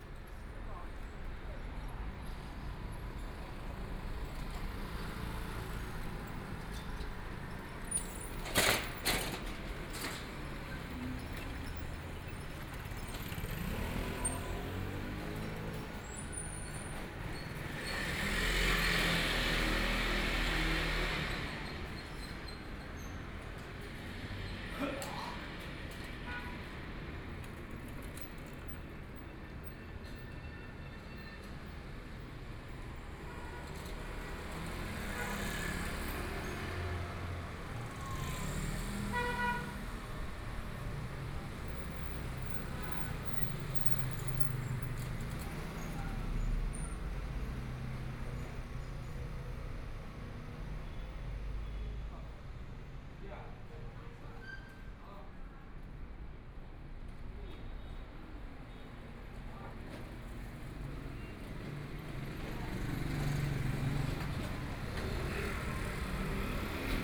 Sichuan Road, Shanghai - in the Street
Traffic Sound, Old small streets, Narrow channel, Binaural recordings, Zoom H6+ Soundman OKM II